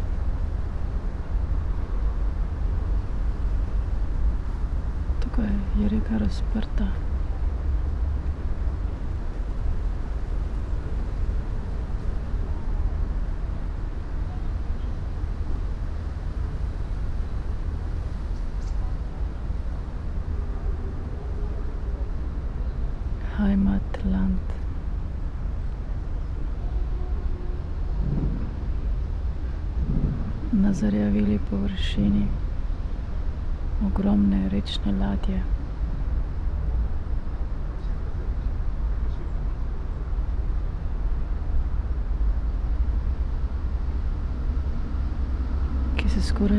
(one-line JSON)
{"title": "river ship Haimatland, islands tail, Mitte, Berlin, Germany - land, water", "date": "2015-09-02 14:01:00", "description": "spoken words, wind, streets and river traffic, swifts close to the water surface\nSonopoetic paths Berlin", "latitude": "52.51", "longitude": "13.41", "altitude": "32", "timezone": "Europe/Berlin"}